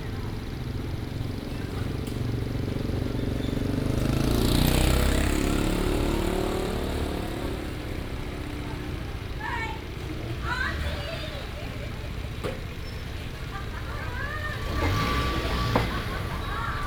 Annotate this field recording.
In front of the restaurant, traffic sound, At the intersection